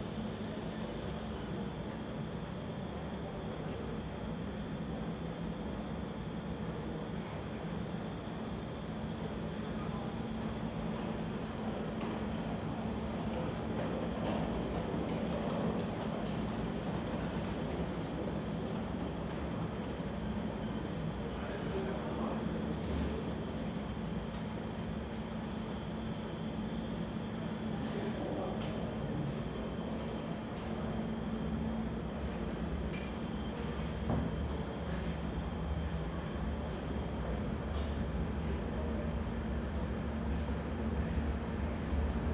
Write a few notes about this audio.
all trains are late because of heavy thunderstorms, departure is uncertain, ideling in waiting room, listening to the station, (Sony PCM D50, Primo EM172)